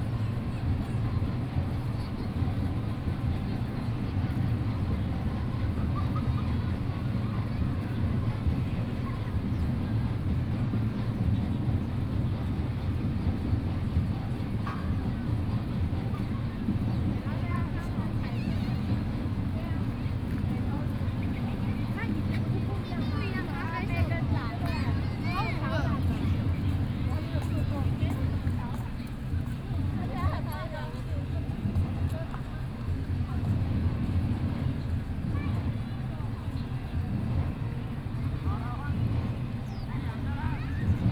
Qixing Park, Taipei - In the Park
Holiday in the park community festivals, Binaural recordings, Sony PCM D50 + Soundman OKM II
Beitou District, Taipei City, Taiwan, 3 November 2013, 13:41